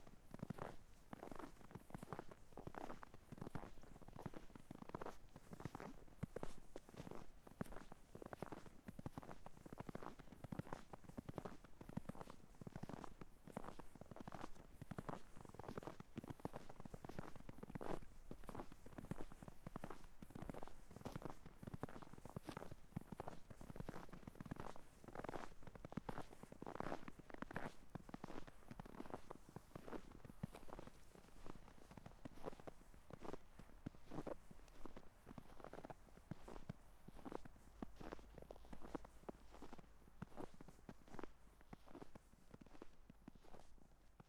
snow walk
the city, the country & me: january 6, 2016